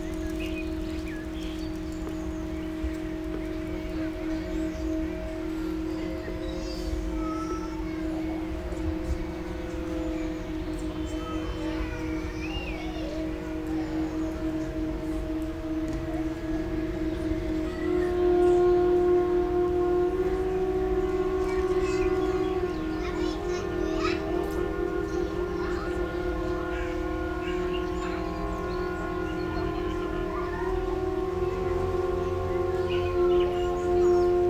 Innstraße, Innsbruck, Österreich - Musikminiaturen vogelweide
vogelweide, waltherpark, st. Nikolaus, mariahilf, innsbruck, stadtpotentiale 2017, bird lab, mapping waltherpark realities, kulturverein vogelweide, veranstaltung 10 musikminaturen von studenten des konz Innsbruck
Innsbruck, Austria, May 2017